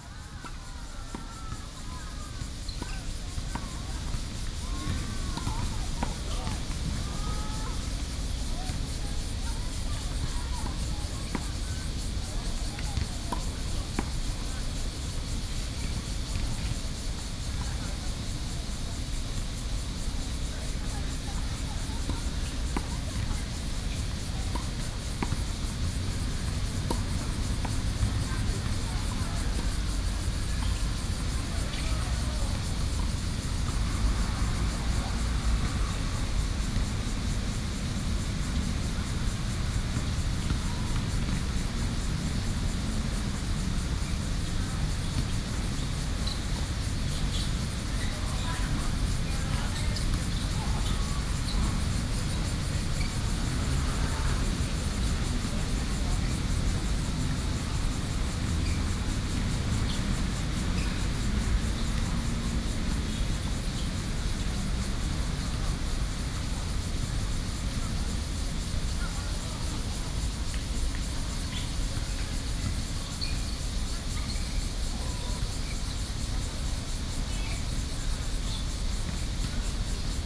NATIONAL TAIWAN UNIVERSITY COLLEGE OF MEDICINE - Basketball and Tennis
Basketball, Tennis, Environmental Noise, Sony PCM D50 + Soundman OKM II
Zhongzheng District, 仁愛林森路口, 6 August, 18:22